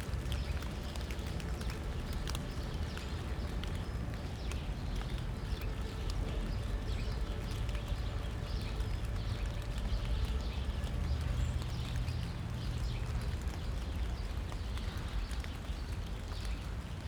Jakobikirchstraße, Berlin, Germany - Behind the church surrounded by sirens in spattering rain
The rain spatters on thick autumn leaves where I stand and on my coat. Occasionally other leaves fall in ones and twos. There are not so many left on the trees now. A close ambulance or fire truck sounds its siren loudly to the traffic. Road works are clogging up the flow here and it has trouble getting through. The siren echos from the buildings differently as it changes position. The sound seems to encircle me but the complex acoustics and sonic channels of this area make it impossible to know where it really is.
Deutschland, 17 November 2020